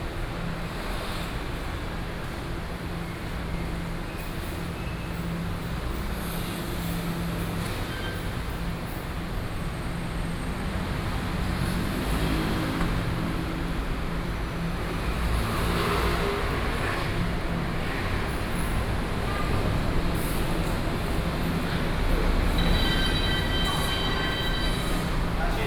Neili Station, Taoyuan - Station hall
Station hall, Broadcast station message, Sony PCM D50 + Soundman OKM II
桃園縣, 中華民國